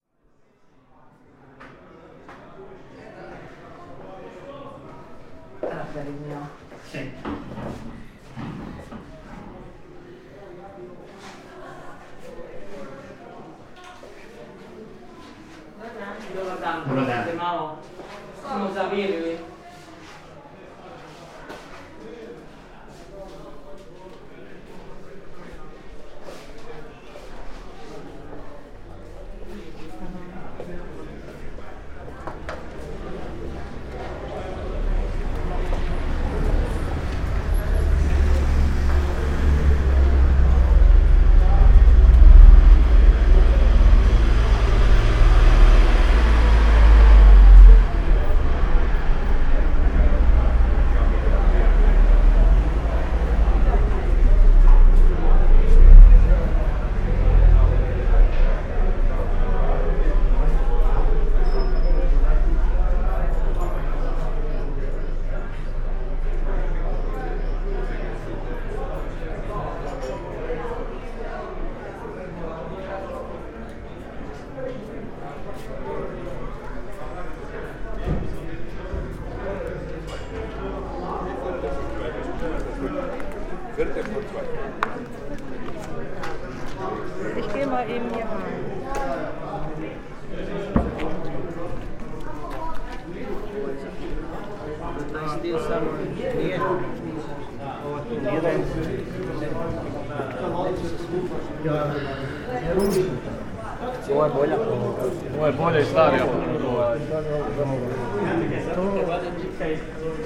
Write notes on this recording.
sunday, antique market, slowly walking through indoor (loggia) and outdoor ambiences, voices - small talks, bargaining, cars, moped, steps